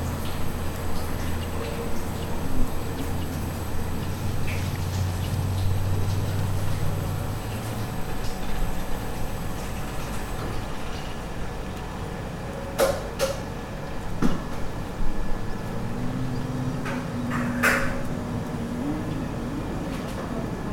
Pavshinskiy bulvar, Krasnogorsk, Moscow oblast, Russia - Hot weather in Moscow area. Street sounds, noises. Construction worker speaking on the phone
Recorded on Sony PCM A-10